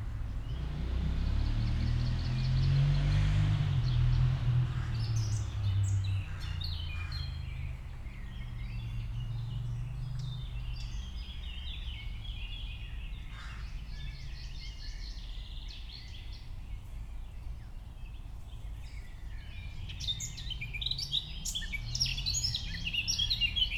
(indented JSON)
{
  "title": "Maribor, Studenski gozd - forest ambience",
  "date": "2012-05-30 13:30:00",
  "description": "Maribor Studenski forest ambience. cars everwhere.\n(SD702 DPA4060)",
  "latitude": "46.56",
  "longitude": "15.61",
  "altitude": "280",
  "timezone": "Europe/Ljubljana"
}